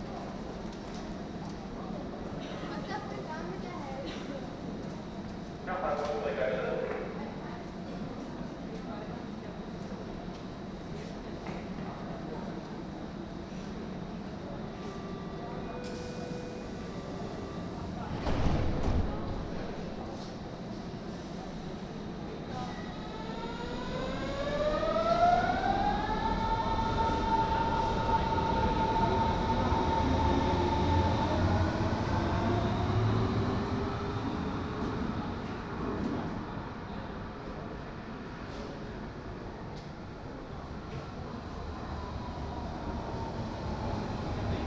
Schönhauser Allee, Berlin, Deutschland - Schönhauser Allee S-Bahn Station
For my multi-channel work "Ringspiel", a sound piece about the Ringbahn in Berlin in 2012, I recorded all Ringbahn stations with a Soundfield Mic. What you hear is the station Schönhauser Allee at noon in June 2012.
20 June 2012